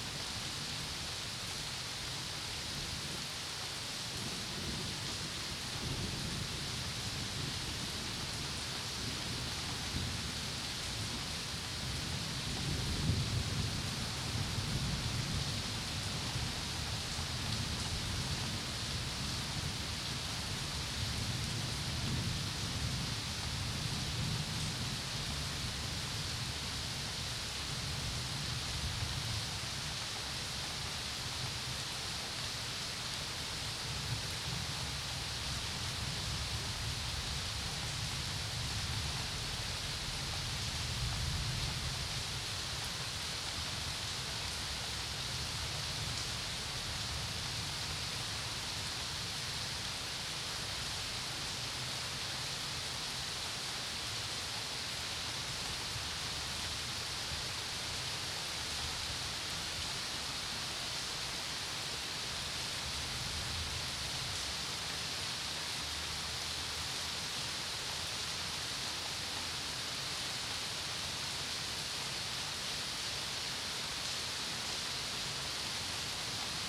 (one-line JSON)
{"title": "Borek, Wroclaw, Poland - Summer storm, gentle rain on Jaworowa 39", "date": "2013-08-09 20:44:00", "description": "Gerard Nerval, Chimery\nsonet ostatni : Złote wersy (Vers dorés)\nprzekład Anka Krzemińska [Anna Sileks]\nAch cóż! Wszystko jest wrażliwe!\nPitagoras\nCzłowieku ! Wolnomyślicielu ! - sądzisz, żeś jeden myślący\nW świecie tym, gdzie życie w każdej rzeczy lśniące :\nOd sił coś opanował twa wolność zależny\nLecz Wszechświat twoje znawstwa głucho sponiewierzy.\nSzanuj w bestii jej siłę działająca :\nW każdym kwiecie jest dusza w Naturze wschodząca ;\nW metalu jest ukryta miłości misteria :\nWszystko jest wrażliwe ! I mocy z bytu twego pełne !\nStrzeż się w ślepym murze szpiegującego wzroku :\nPrzy każdej materii słowo krąży boku ...\nNie wymuszaj jej służby obojętnym celom !\nCzęsto w mrocznym bycie Bóg ukryty mieszka ;\nI jak narodzone oko pokryte jest rzęsami\nW łupinie czysty duch wzrasta wzmocnionej kamieniami !\nVers dorés\nHomme ! libre penseur - te crois-tu seul pensant\nDans ce monde où la vie éclate en toute chose :\nDes forces que tu tiens ta liberté dispose", "latitude": "51.08", "longitude": "17.02", "altitude": "125", "timezone": "Europe/Warsaw"}